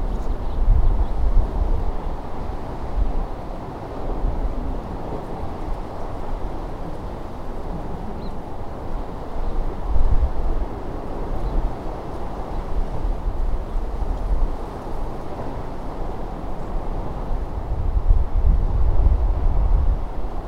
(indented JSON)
{
  "title": "Marina Serra LE, Italia - Marina Serra Parking Open Space 30092015 18.00hr",
  "date": "2015-09-30 18:00:00",
  "description": "Windy afternoon by the sea...",
  "latitude": "39.91",
  "longitude": "18.39",
  "altitude": "8",
  "timezone": "Europe/Rome"
}